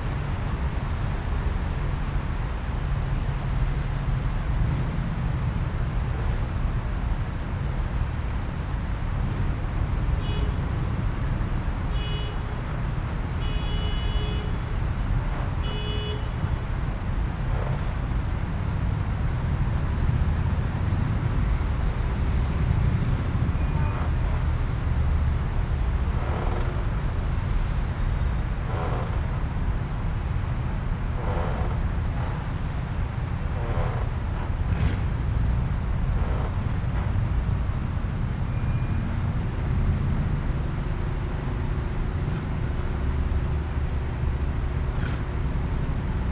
Quai Joseph Gillet, Lyon, France - Arres de péniches
Quai de Saône à Lyon 4e, près du Pont Schuman, des amarres dune péniche à quoi gémissent.